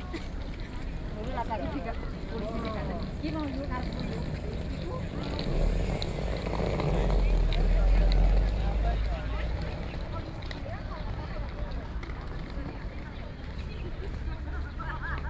Chiang Kai-shek Memorial Hall, Taipei - Tourists
Square entrance, Sony PCM D50+ Soundman OKM II